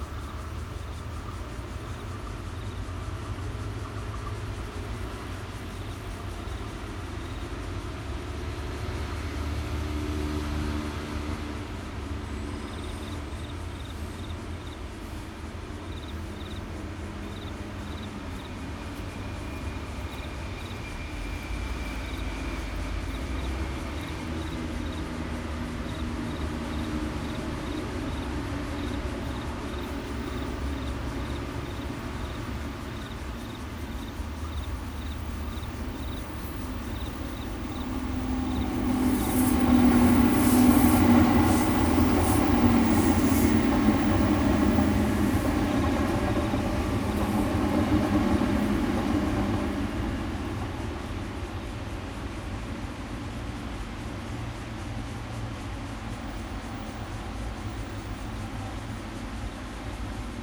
淡水線, New Taipei City - In the next MRT track
Insect sounds, Traffic Sound, MRT trains through, Bicycle sound, In the next MRT track
Zoom H2n MS+XY +Spatial Audio